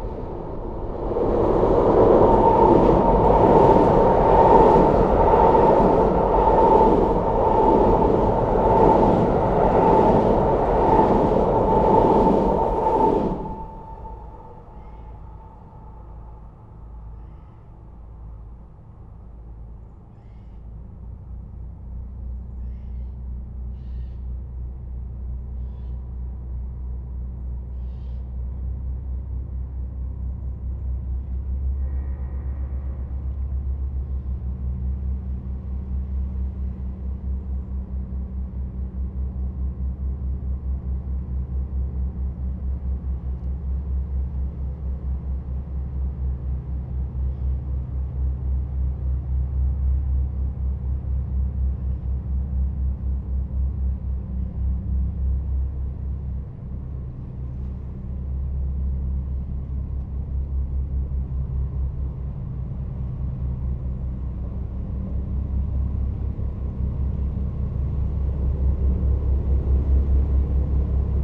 {"title": "Le Pecq, France - Train", "date": "2016-09-23 10:00:00", "description": "A train is driving on the bridge and an industrial boat is passing by on the Seine river.", "latitude": "48.90", "longitude": "2.11", "altitude": "23", "timezone": "Europe/Paris"}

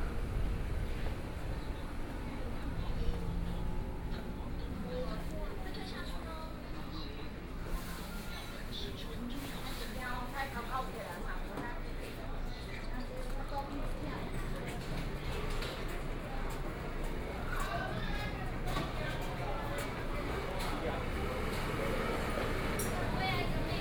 {"title": "南館市場, Yilan City - Walking through the market", "date": "2014-07-22 13:45:00", "description": "Walking through the market in a different way, Traffic Sound, Various shops sound\nSony PCM D50+ Soundman OKM II", "latitude": "24.76", "longitude": "121.75", "altitude": "14", "timezone": "Asia/Taipei"}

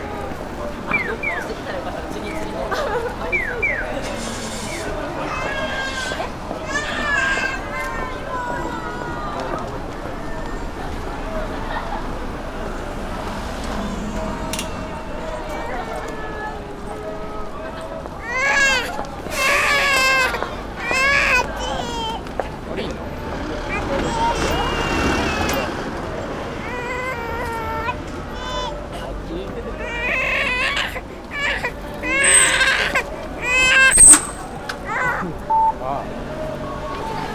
{"title": "pedestrian crossing, Gion, Kyoto - crossings sonority", "date": "2014-11-06 18:49:00", "latitude": "35.00", "longitude": "135.77", "altitude": "46", "timezone": "Asia/Tokyo"}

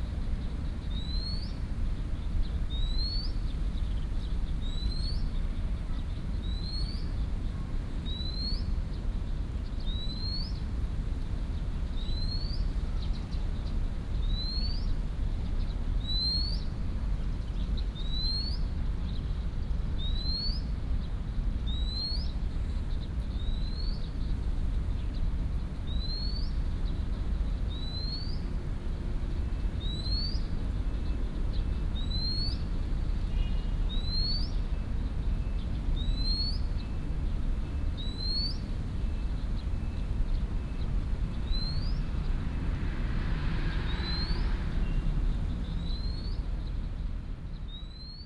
{"title": "Peace Memorial Park, Taiwan - in the park", "date": "2016-08-01 16:12:00", "description": "in the park, Bird sounds", "latitude": "25.04", "longitude": "121.51", "altitude": "19", "timezone": "Asia/Taipei"}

